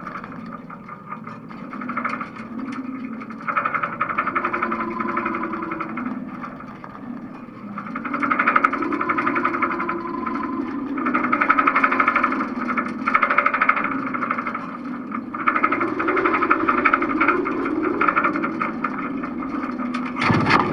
stormy day (force 7-8), contact mic on the side stay of a sailing boat
the city, the country & me: june 13, 2013